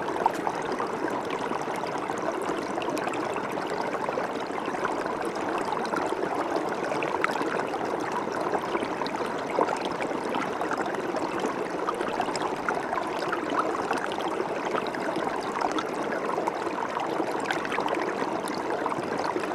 Lithuania, Utena, soundwalk
everything is frozen: cracking, moaning trees in wind, little river in the valley